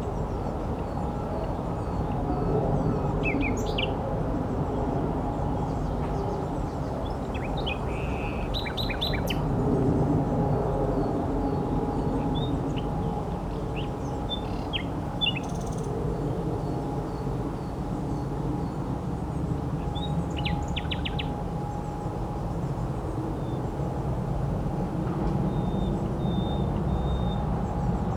Hauptstraße, Berlin, Germany - Nightingale with heavy traffic
I'm surprised how close to the busy Hauptstrasse this Nightingale is prepared to live.